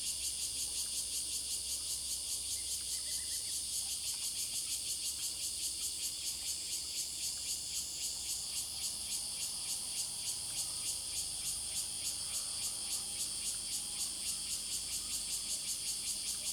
明峰村, Beinan Township - Morning in the mountains
Morning in the mountains, Cicadas sound, Birdsong, Traffic Sound
Zoom H2n MS +XY